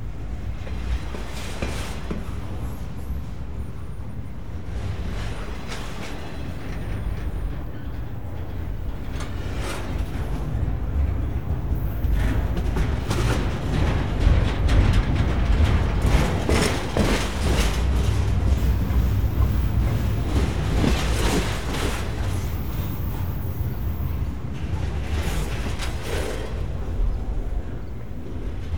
Montreal: Outremont Railroad Tracks - Outremont Railroad Tracks
equipment used: Sound Devices 722 Digital Audio Recorder & Audio-Technica AT825 Stereo Microphone
Walking along the railroad in Outremont
QC, Canada, 9 November 2008, ~20:00